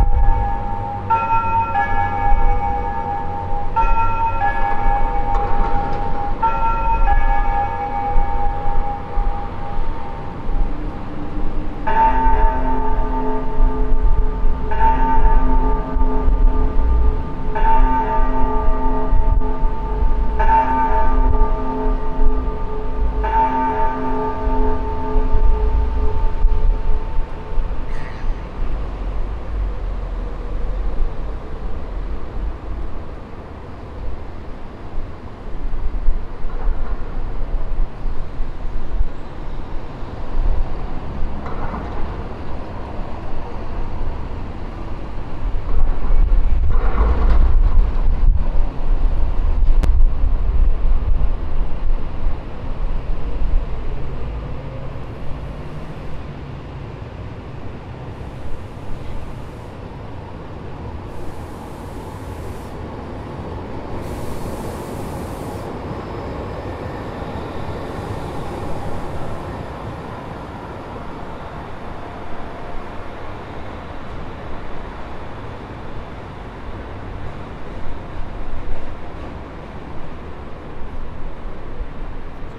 the pier of Uribitarte near the river and in front of the town hall